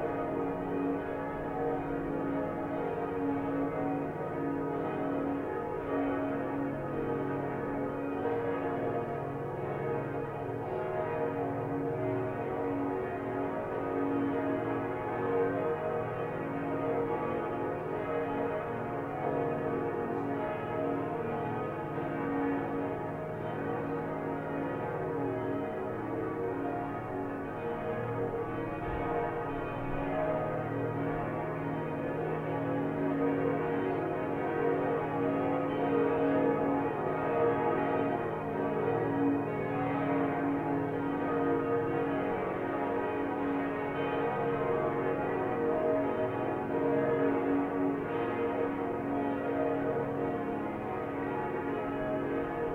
Close to place Gutenberg, Strasbourg, France - Cathedral and churches bells
Strasbourg cathedral's bell and several other churches ringing together everyday at 6pm during the lockdown caused by the coronavirus crisis.
Recorded from the window.
We can also hear some pigeons flying or walking on the roof.
Gear : Zoom H5.
France métropolitaine, France, 9 April 2020, 18:00